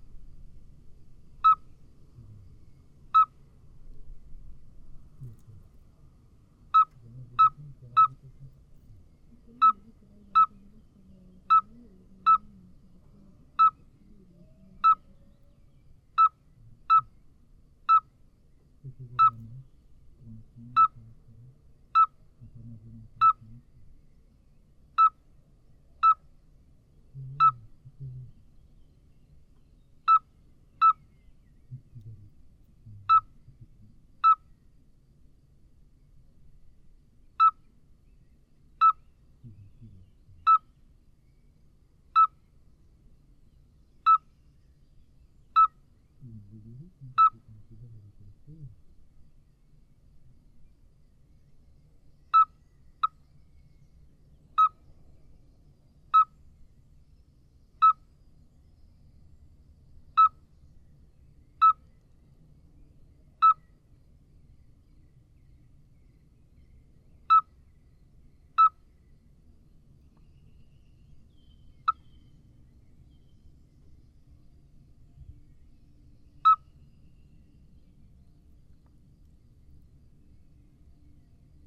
Bouhey, France - Common midwife toad
Below two rubbish bins, we heard two small Common midwife toad. It's a small frog which makes repetitive tuu tuu tuu tuu. Without experience, you could think it's a Eurasian Scops Owl. But, below a rubbish bin, this could be a problem to find that kind of bird ! The midwife toad has extremely beautiful gold silver eyes. I put the recorder near the frogs, but unfortunately people is speaking at the entrance of the cemetery.